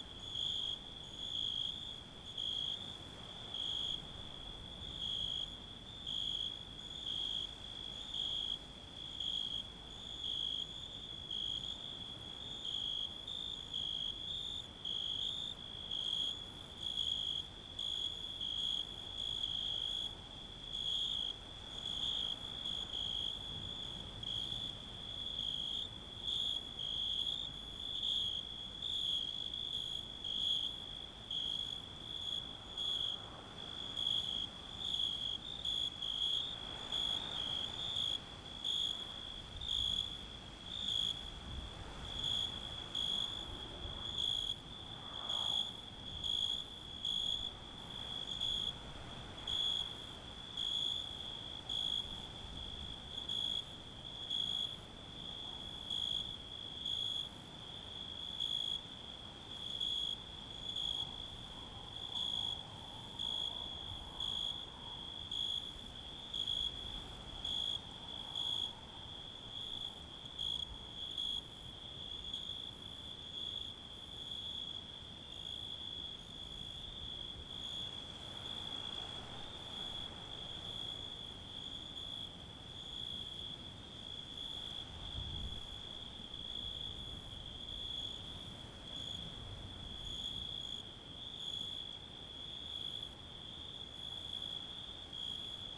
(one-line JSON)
{"title": "Orhei Vechi, Moldova - The Cricket Symphony at Old Orhei", "date": "2018-09-28 20:00:00", "description": "It was at the end of the summer and it started to get cold after the sunset. The recording was done with a Zoom H6 and 2 microphones: Zoom SSH-6 (Shotgun mic) that was hiding in the bushes with the crickets and Shure Sm58 (Omnidirectional mic) some meters away. This is a raw version of the recording. Thank you!", "latitude": "47.31", "longitude": "28.96", "altitude": "118", "timezone": "Europe/Chisinau"}